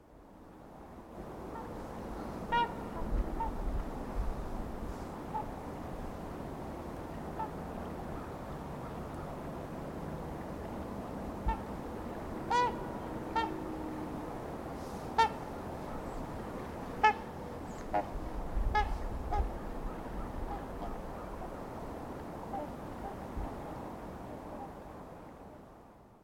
Otsego County Park, County Park Road, Gaylord, MN, USA - snow geese in flight
Recorded using a Zoom H1.